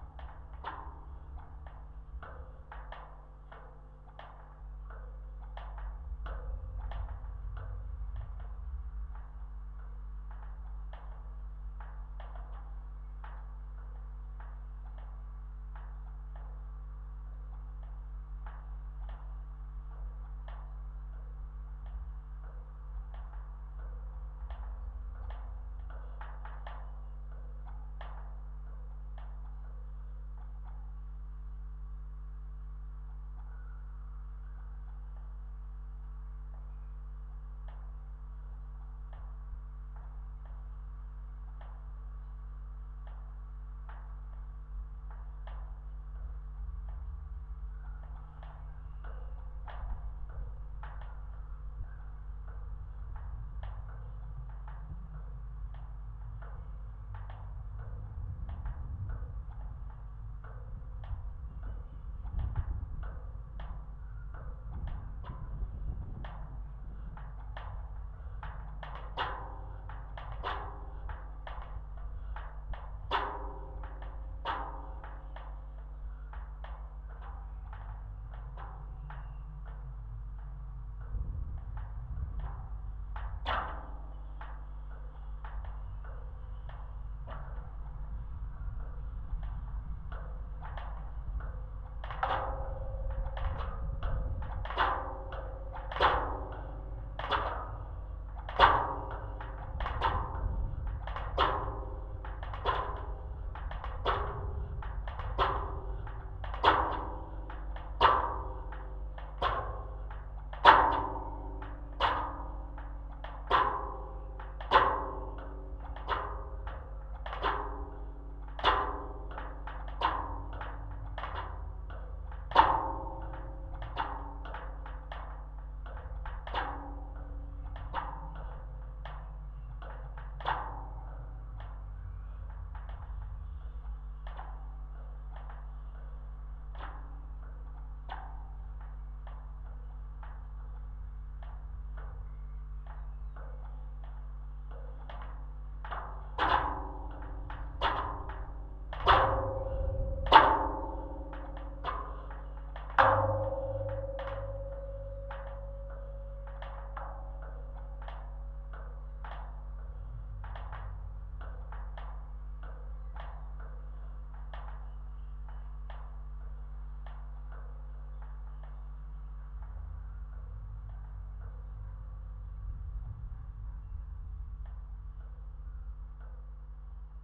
Kareela, NSW, Australia - Very tall light post at the edge of Kareela oval, near my house
What you can hear is the wires inside the pole banging around, as well as the sounds of the wind and a slight electric hum.
Two JRF contact microphones (c-series) into a Tascam DR-680.
Kirrawee NSW, Australia, 6 September 2014